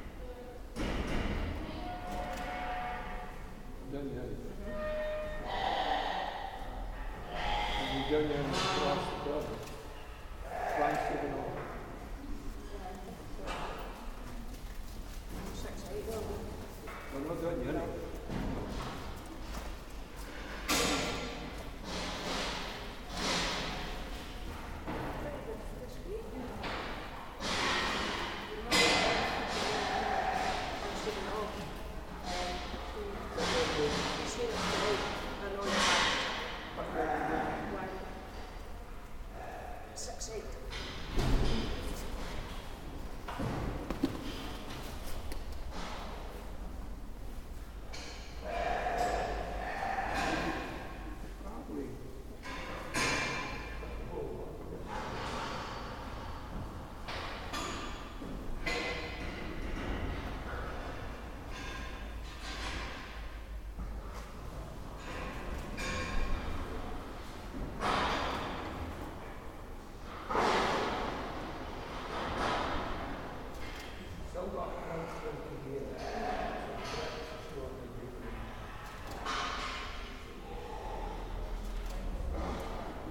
This is the sound of Oliver Henry, June Moulder and Janet Robertson of the Shetland Wool Brokers judging wool on the hoof at the Shetland Flock Book. This involves checking the fleeces of sheep in their pens and judging their quality. You can only hear them faintly in this recording - the other sounds are a cow that was also in the Auction Marts, the sounds of the metal gates clanging, and a ram that was baaing.